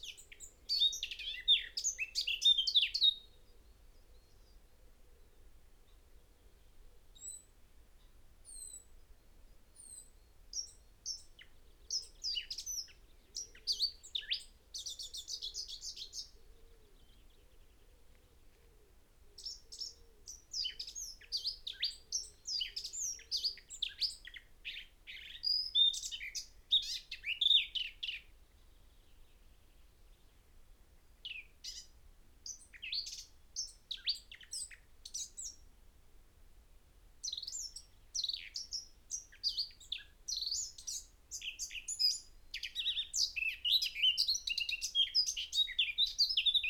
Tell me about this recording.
blackcap song ... dpa 4060s in parabolic to mixpre3 ... bird calls ... song ... from chaffinch ... wood pigeon ... goldfinch ... pheasant ... blackbird ... blue tit ... crow ... this sounded like no blackcap had heard before ... particularly the first three to five minutes ... both for mimicry and atypical song ...